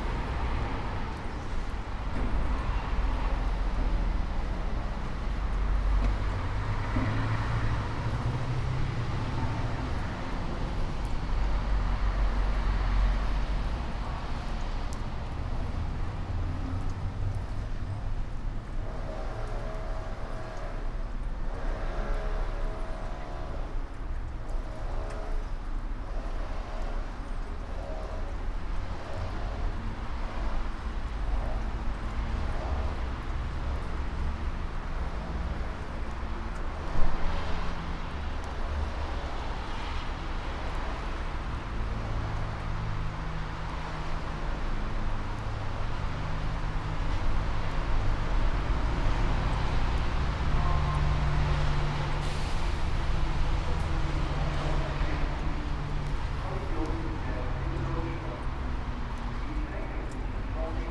Zürich West, Schweiz - Brücken am Toni-Areal
Brücken am Toni-Areal, Zürich West
Zürich, Switzerland, 31 December 2014